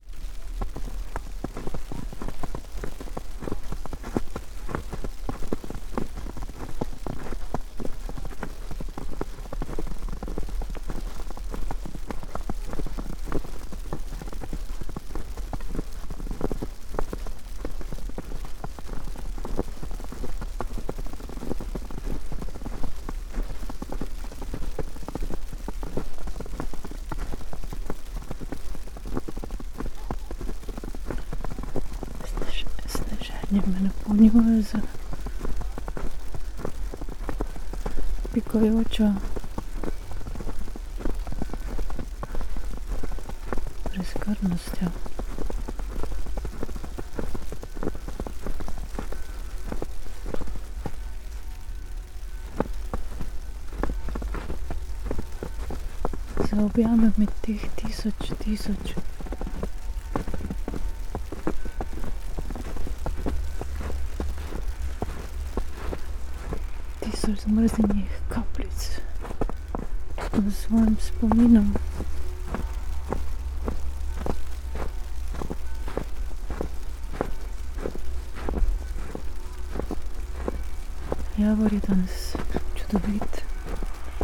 {
  "title": "sonopoetic path, Maribor, Slovenia - walking poem",
  "date": "2013-01-24 16:59:00",
  "description": "snow flakes and umbrella, steps, snow, spoken words",
  "latitude": "46.57",
  "longitude": "15.65",
  "altitude": "289",
  "timezone": "Europe/Ljubljana"
}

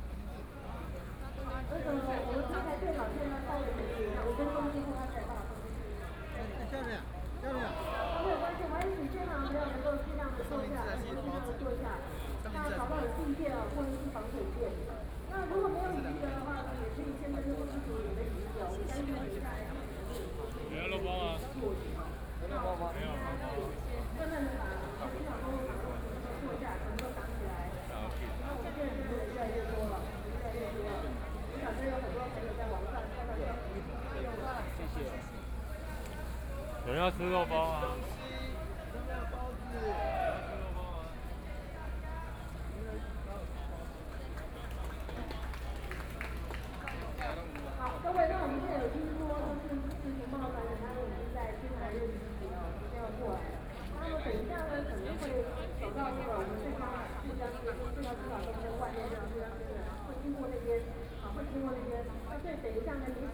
{
  "title": "中正區幸福里, Taipei City - Student movement",
  "date": "2014-04-01 13:23:00",
  "description": "Walking through the site in protest, People and students occupied the Legislature Yuan（Occupied Parliament）",
  "latitude": "25.04",
  "longitude": "121.52",
  "altitude": "9",
  "timezone": "Asia/Taipei"
}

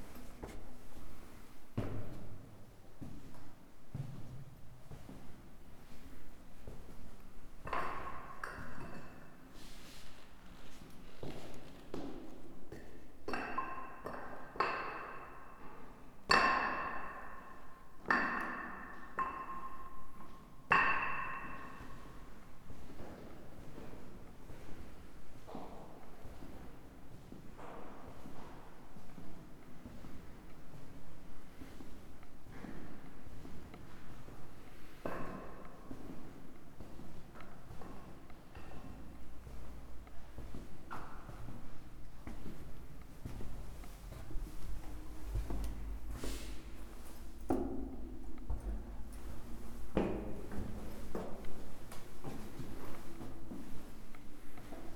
{
  "title": "de Septiembre, Centro, León, Gto., Mexico - Caminando por las criptas del templo expiatorio.",
  "date": "2022-03-29 17:46:00",
  "description": "Walking through the crypts of the expiatorio temple.\nGoing down from the stairs at the entrance of the crypts and walking through its corridors trying to avoid the few people that were there that day.\n*I think some electrical installations caused some interference.\nI made this recording on March 29th, 2022, at 5:46 p.m.\nI used a Tascam DR-05X with its built-in microphones.\nOriginal Recording:\nType: Stereo\nBajando desde las escaleras de la entrada de las criptas y caminando por sus pasillos tratando de evitar la poca gente que había ese día.\n*Creo que algunas instalaciones eléctricas causaron algunas interferencias.\nEsta grabación la hice el 29 de marzo de 2022 a las 17:46 horas.\nUsé un Tascam DR-05X con sus micrófonos incorporados.",
  "latitude": "21.12",
  "longitude": "-101.67",
  "altitude": "1805",
  "timezone": "America/Mexico_City"
}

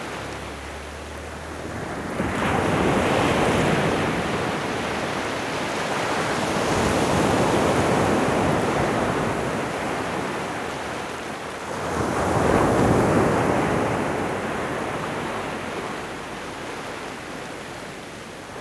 {
  "title": "Klong Muang Beach - Close recording of the waves on the beach, in Thailand",
  "date": "2018-10-19",
  "description": "During the night at Klong Muang Beach in Thailand, microphone close to the waves on the beach.\nRecorded by an ORTF Setup Schoeps CCM4x2 in a Cinela Windscreen\nRecorder Sound Devices 633\nSound Ref: TH-181019T02\nGPS: 8.051151, 98.755929",
  "latitude": "8.05",
  "longitude": "98.76",
  "altitude": "1",
  "timezone": "Asia/Bangkok"
}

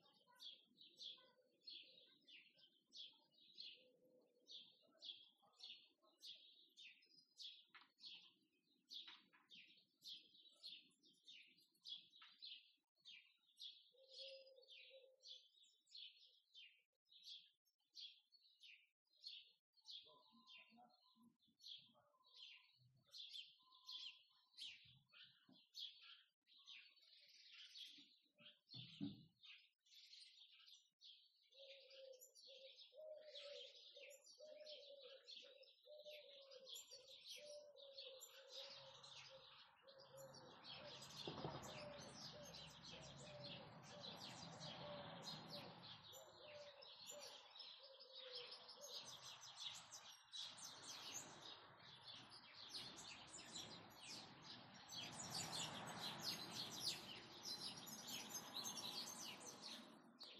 {
  "title": "Suchohrdly u Miroslavi, Suchohrdly u Miroslavi, Česko - Garden sounds",
  "date": "2020-04-14 17:30:00",
  "description": "It was quite windy, but given the fact I found a place to hide, it is not really recognizable on the recording. You can mostly hear birds chirping and then in the background a car passing by.",
  "latitude": "48.94",
  "longitude": "16.36",
  "altitude": "218",
  "timezone": "Europe/Prague"
}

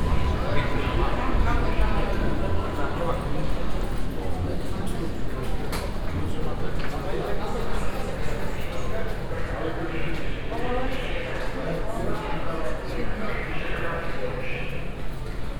{
  "title": "Poznan, central train station - station to station",
  "date": "2015-09-11 20:15:00",
  "description": "(binaural) going from platform 3 through a tunel under the old station building to the west station. train announcements, hurrying passengers, rumbling suitcases, trains idling. (sony d50 + luhd pm01 binaurals)",
  "latitude": "52.40",
  "longitude": "16.91",
  "altitude": "79",
  "timezone": "Europe/Warsaw"
}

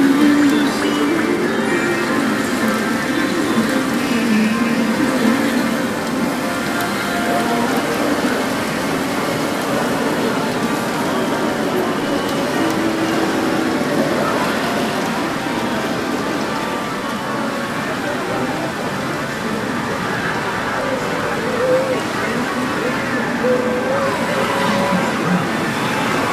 {
  "title": "pachinko parlor",
  "description": "Pachinko is a Japanese gaming device used for amusement and prizes.",
  "latitude": "35.63",
  "longitude": "139.64",
  "altitude": "51",
  "timezone": "GMT+1"
}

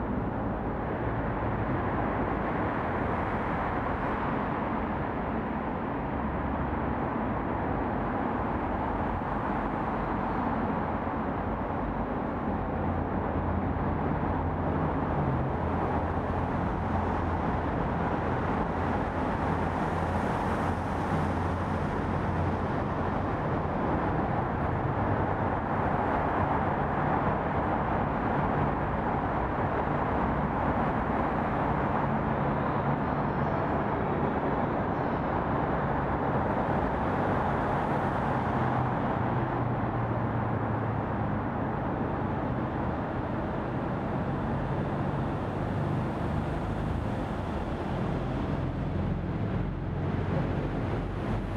{
  "title": "대한민국 서울특별시 서초구 방배4동 882-36 - Seoripul Tunnel",
  "date": "2019-10-03 08:24:00",
  "description": "Bangbae-dong, Seoripul Tunnel.\n서리풀 터널",
  "latitude": "37.49",
  "longitude": "127.00",
  "altitude": "59",
  "timezone": "Asia/Seoul"
}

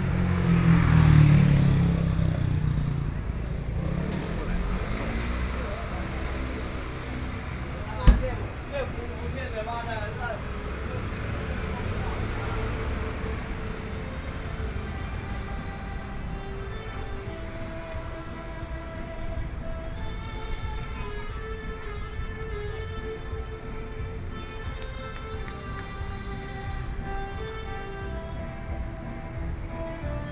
{
  "title": "Bihu Park, Taipei City - Walking through the park",
  "date": "2014-03-15 15:55:00",
  "description": "Walking through the park, Traffic Sound, Construction noise, Take a walk, Buskers\nBinaural recordings",
  "latitude": "25.08",
  "longitude": "121.58",
  "timezone": "Asia/Taipei"
}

